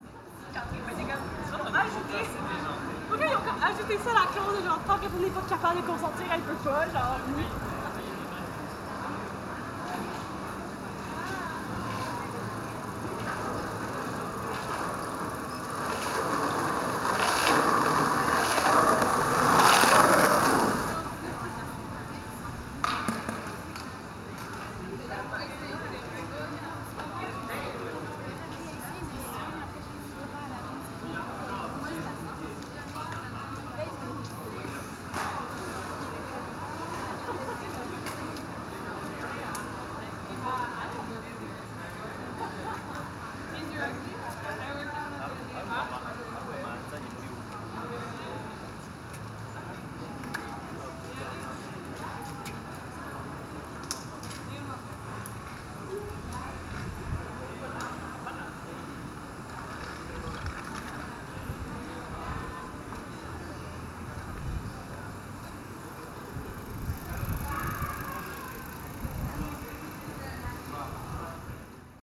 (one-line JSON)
{"title": "Avenue du Mont-Royal E, Montréal, QC, Canada - Busy street, skater and chatter", "date": "2021-08-18 20:10:00", "description": "Mont Royal ave, Zoom MH-6 and Nw-410 Stereo XY", "latitude": "45.53", "longitude": "-73.58", "altitude": "51", "timezone": "America/Toronto"}